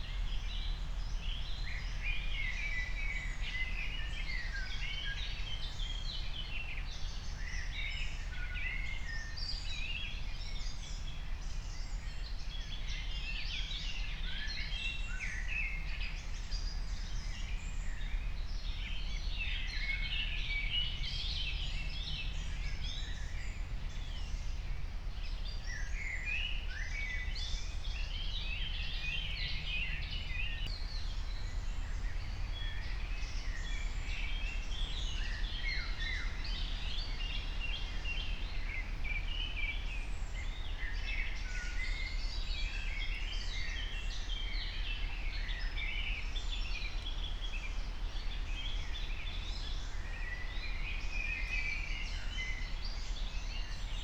04:00 Berlin, Wuhletal - wetland / forest ambience